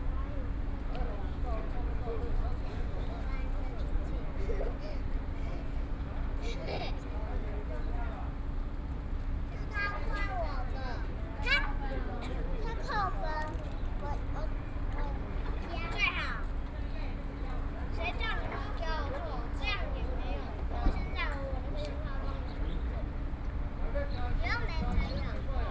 {"title": "ChiayiStation, Taiwan - Bus stop", "date": "2013-07-26 15:40:00", "description": "Taiwan High Speed Rail Station, In the Bus stop, Sony PCM D50 + Soundman OKM II", "latitude": "23.46", "longitude": "120.32", "altitude": "14", "timezone": "Asia/Taipei"}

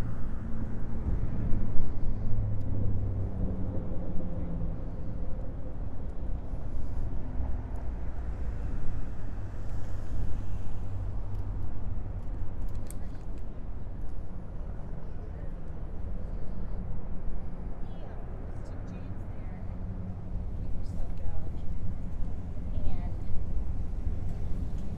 {"title": "Mile Square, Indianapolis, IN, USA - Binaural Bicycling", "date": "2017-03-05 16:04:00", "description": "Binaural recording of riding a bicycle on Meridian St. in Indianapolis. Heading south down around the circle and continuing down to the Wholesale District. Best listened to with headphones to get the maximum binaural effect.\nSony PCM-M10\nAudiotalaia Omnidirectional Microphones (binaural)\nSound Forge - fades", "latitude": "39.77", "longitude": "-86.16", "altitude": "237", "timezone": "America/Indiana/Indianapolis"}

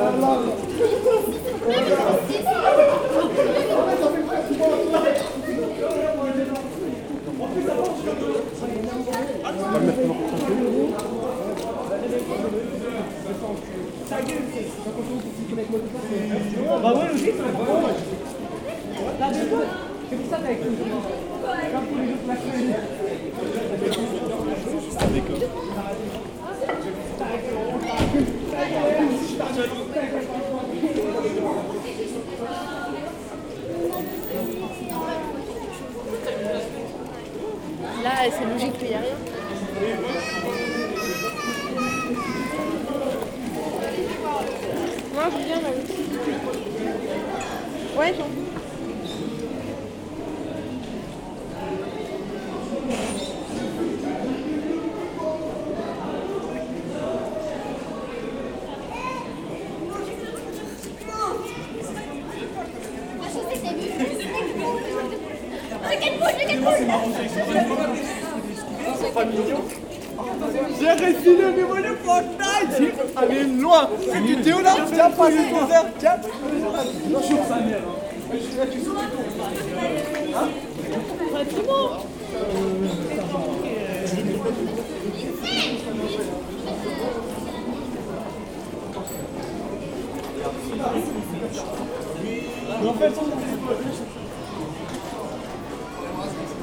{"title": "Chartres, France - Young people joking", "date": "2018-12-31 16:15:00", "description": "Rue du Bois Merrain - While walking along this busy shopping street, a group of young people talks loudly, I follow them for hundred meters, until they go to the ice rink, which is flooded with tasteless commercial music.", "latitude": "48.44", "longitude": "1.49", "altitude": "161", "timezone": "Europe/Paris"}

